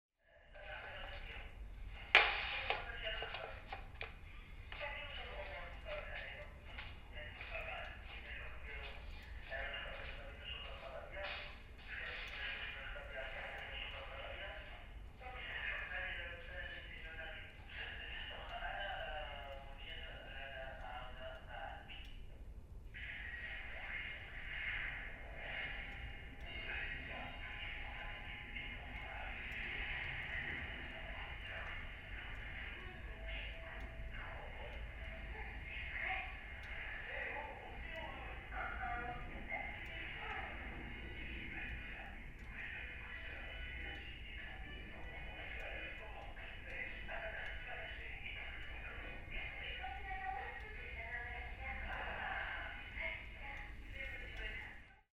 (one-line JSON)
{"title": "Sint-Jans-Molenbeek, Belgium - Broken intercom", "date": "2013-03-24 18:12:00", "description": "A broken intercom was transmitting the sounds from the interior of a flat into the public space of the road. The sound was treble-heavy and could be heard from a surprising distance. Recorded with EDIROL R09 amd onboard microphones, sat on a piece of the door very close to the intercom.", "latitude": "50.85", "longitude": "4.32", "altitude": "38", "timezone": "Europe/Brussels"}